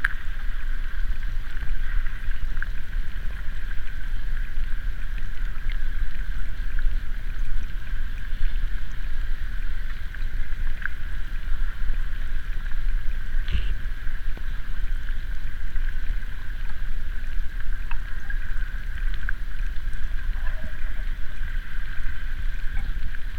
Šventupys, Lithuania, river underwater
sense sounds of flowing river Sventoji. hydrophones.